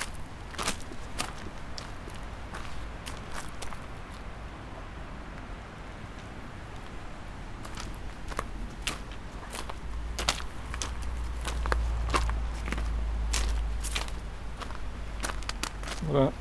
Chemin de Plan Montmin, Faverges, France - En chemin
Sur le chemin de plan Montmin au dessus de Vesonne, rencontre. Les pierres du chemin, un hélicoptère de passage et une rencontre.
France métropolitaine, France, 17 July 2021, 17:15